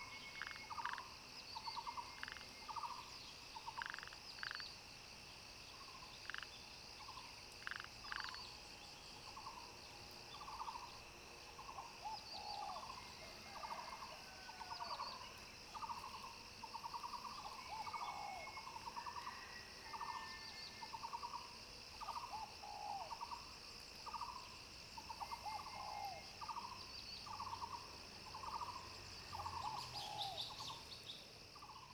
{"title": "TaoMi Village, Puli Township - In the morning", "date": "2015-04-30 06:44:00", "description": "Frogs sound, Bird calls\nZoom H2n MS+XY", "latitude": "23.94", "longitude": "120.94", "altitude": "499", "timezone": "Asia/Taipei"}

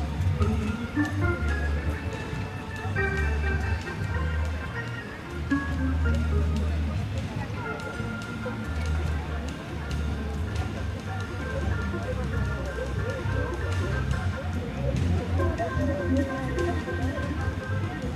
{"title": "Passeig Marítim de Neptú, Grau i Platja, Valencia, España - La vida del Paseo de la Playa de Gandía", "date": "2020-08-16 21:06:00", "description": "Todos los años vamos a dar un paseito por Gandía, es una playa muy turística pero con tal de de estar cerca del mar... me vale!!jejeje Fuimos a coger unos helados y paseando por el paseo marítimo empezamos a escuchar a unos músicos de un hotel tocar Jazz, así que nos paramos a escucharles mientras tomabamos el heladillo. Me ha encantado ese contraste del trasiego de la gente, probablemente muchos ajenos a la música, y sin embargo, había varías personas sentadas fuera del hotel escuchando a los músicos, eramos pocos pero... me hizo disfrutar mucho de ese momento y esa mezcla entre el trasiego y el crear una pequeña burbuja para escuchar solo y únicamente a los músicos. Puedo decir que aunque parezca una tontería... ha sido un momento muy especial para mi... :)", "latitude": "39.00", "longitude": "-0.16", "altitude": "8", "timezone": "Europe/Madrid"}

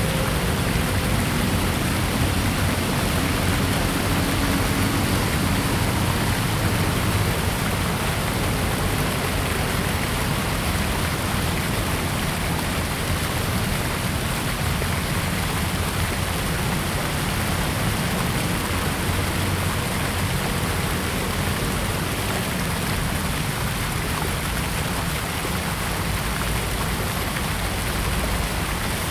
{"title": "Sec., Ren'ai Rd., Da'an Dist. - Fountain", "date": "2015-06-18 18:50:00", "description": "Fountain, Traffic Sound\nZoom H2n MS+XY", "latitude": "25.04", "longitude": "121.54", "altitude": "9", "timezone": "Asia/Taipei"}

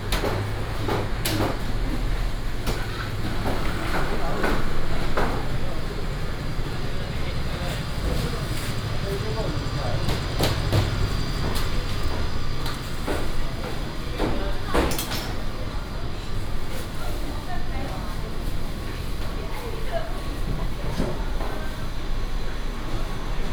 {"title": "新竹市果菜批發市場, Taiwan - Meat wholesale market", "date": "2017-09-15 05:05:00", "description": "Underground floor, Meat wholesale market, Binaural recordings, Sony PCM D100+ Soundman OKM II", "latitude": "24.81", "longitude": "120.98", "altitude": "23", "timezone": "Asia/Taipei"}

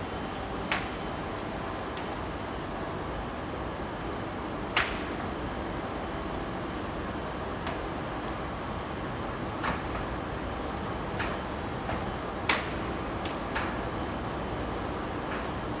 {"title": "Grevenbroich, Germany - Gantry moving along the coal mountain, cracking as it goes", "date": "2012-11-02 12:36:00", "description": "A lorry passes, the conveyer belts stop, an alarm sounds and one of the huge gantries that straddle the coal mountains very slowly changes position. I am observing this from amongst trees. It is a very windy morning.", "latitude": "51.07", "longitude": "6.54", "altitude": "71", "timezone": "Europe/Berlin"}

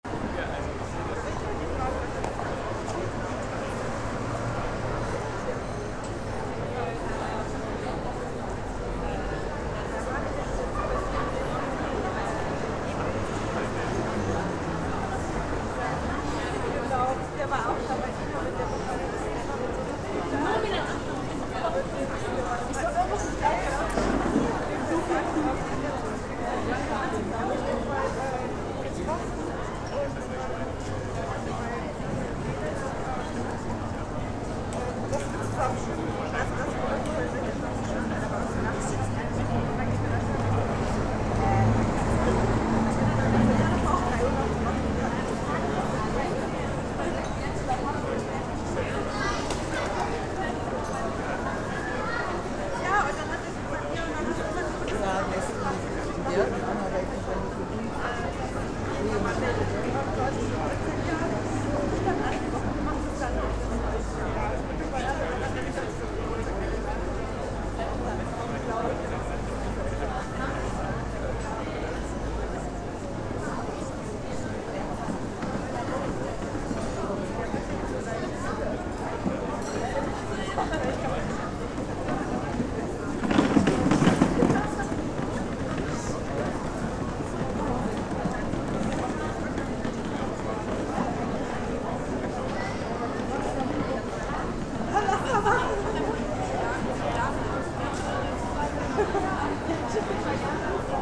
{"title": "Fürstenstraße, Bonn, Deutschland - Fuzo (pedestrian zone) Bonn", "date": "2010-08-23 15:10:00", "description": "a nice summer afternoon in the car-free zone of the city", "latitude": "50.73", "longitude": "7.10", "altitude": "69", "timezone": "Europe/Berlin"}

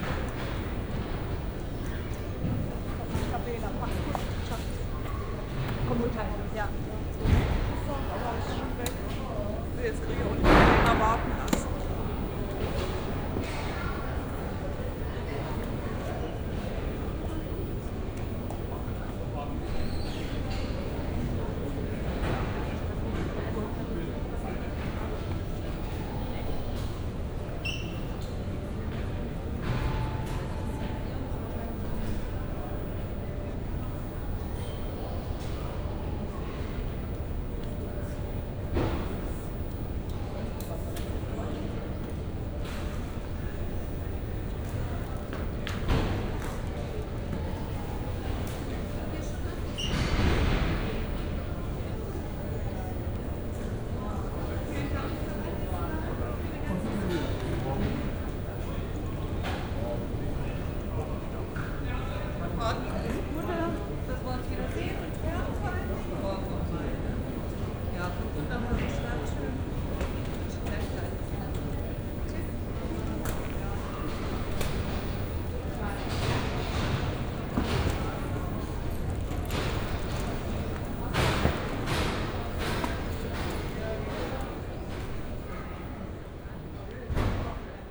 {"title": "Berlin Tegel Airport, terminal C - baggage pickup", "date": "2015-05-09 22:35:00", "description": "(binaural) a few AB flights full with tourists arrived late at the same time at the Tegel airport. these were the last arrivals on this evening. plenty of tired and nervous people waiting for their luggage in the tight hall. each belt is fed with bags from at least two flights. scary clatter on the other side of the wall were the bags are put on the conveyor belts. as if the suitcases were shot out with a cannon on the belts.", "latitude": "52.56", "longitude": "13.30", "altitude": "32", "timezone": "Europe/Berlin"}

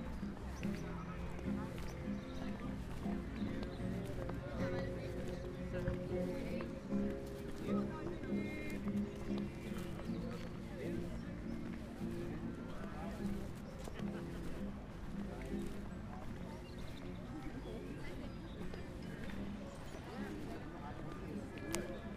{"title": "Südstadt, Bonn, Deutschland - Spring evening at Bonn", "date": "2012-03-22 19:10:00", "description": "Churchbells ringing, a helicopter flying above, people are sitting on the lawn in front of the University of Bonn, chatting, drinking their first spring beer, playing guitar, wearing t-shirts. I walk towards the Biergarten packed with people who ssem to have switched immedeately from winter to spring/summer mood.", "latitude": "50.73", "longitude": "7.10", "altitude": "64", "timezone": "Europe/Berlin"}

